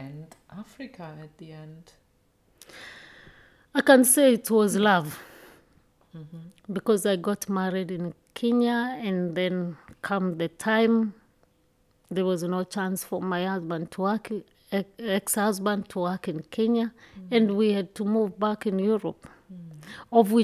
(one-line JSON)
{"title": "Hoetmar, Germany - Now, Ive come home...", "date": "2020-10-23 13:50:00", "description": "Maryann followed her love, and then-husband to Germany. She left behind a well-loved life-style and culture and a functioning life. There were a number of years that she even regretted leaving – as she tells us in reflection. But, Maryann grew strong on the challenges, and moved on to strengthen others: “the things that worried me, are the things I can make someone else strong from”.... and where she is now, she says, she has come home...\nthe entire interview is archived here:", "latitude": "51.87", "longitude": "7.97", "altitude": "83", "timezone": "Europe/Berlin"}